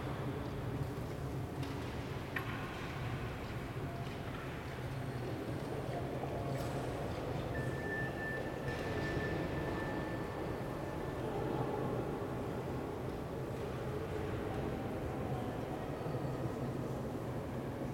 {"title": "Brussels, Belgium - Listening in the Palais de Justice", "date": "2013-06-20 13:28:00", "description": "Balcony inside the building, a nice listening situation. I simply placed 2 omni-directional Naiant X-X mics perhaps 2 feet apart on the balcony, and listened to how the peoples' footsteps - as they passed below - excited the resonances of the very echoey space.", "latitude": "50.84", "longitude": "4.35", "altitude": "79", "timezone": "Europe/Brussels"}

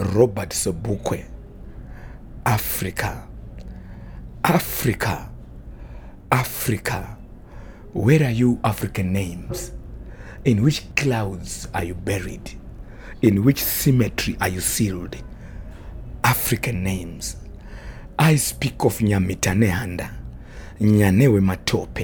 {"title": "The Book Cafe, Harare, Zimbabwe - The Black Poet sings “Africa, my Wonderland…”", "date": "2012-10-19 17:50:00", "description": "The Black Poet aka Mbizo Chiracha recites his work for my mic in the small accountant’s office at the Book Café Harare, where he often presents his songs during Sistaz Open Mic and other public events. In the middle of the piece the poet asks: “Where are you African names? In which clouds are you buried…?”", "latitude": "-17.83", "longitude": "31.06", "altitude": "1489", "timezone": "Africa/Harare"}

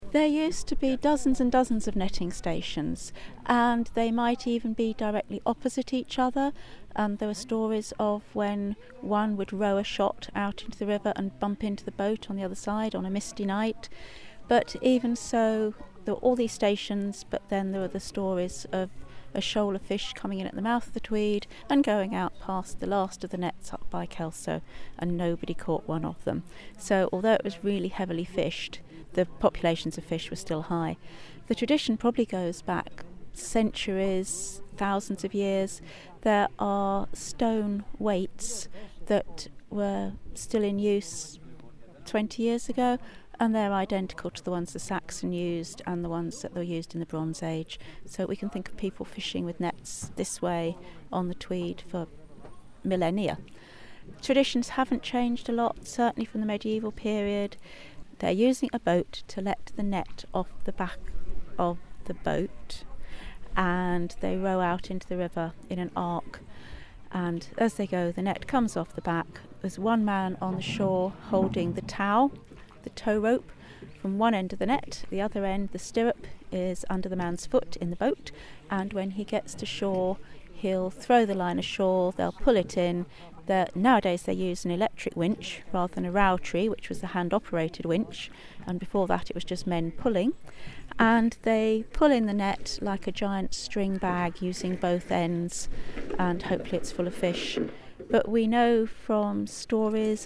{"title": "Paxton, Scottish Borders, UK - River Voices - Martha Andrews, Paxton House", "date": "2013-11-07 14:14:00", "description": "Field recording with Paxton House curator Martha Andrews on the shingle shore at Paxton netting station on the River Tweed in the Scottish Borders.\nMartha talks about the history of netting and the decline in fishing on the River Tweed, as the fishing boat rows a shot in the background.", "latitude": "55.76", "longitude": "-2.10", "timezone": "Europe/London"}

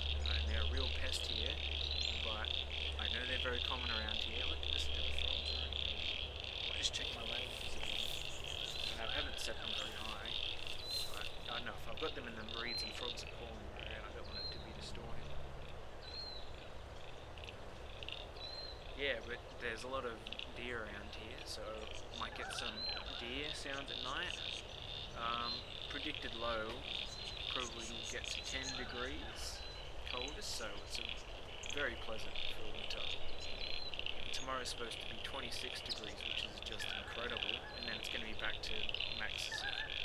{"title": "Royal National Park, NSW, Australia - Leaving my microphones by a coastal lagoon, after 21c Winter's day", "date": "2015-08-01 17:10:00", "description": "First 40 minutes of an overnight recording. A little introduction and then listen as the frogs go from quiet to deafening!\nRecorded with a pair of AT4022's into a Tascam DR-680.", "latitude": "-34.08", "longitude": "151.17", "altitude": "11", "timezone": "Australia/Sydney"}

